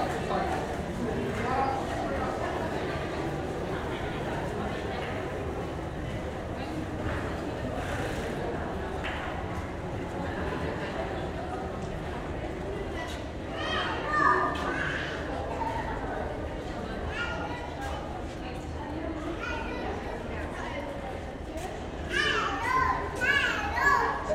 Underpass near train station. Recorded with Sound Devices MixPre-6 II and Audio Technica BP 4025 inside Rycote BBG.
województwo pomorskie, Polska, 6 July